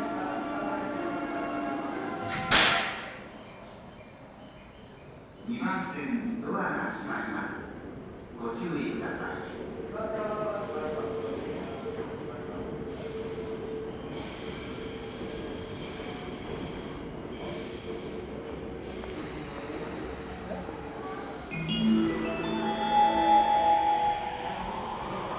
Chome Kanda Surugadai, Chiyoda-ku, Tōkyō-to, Japonia - Ochanomizu Station
One of Tokyo's busy railway stations, also one of the most wonderful views in the city. Recorded witz Zoom H2n
Tōkyō-to, Japan, December 2014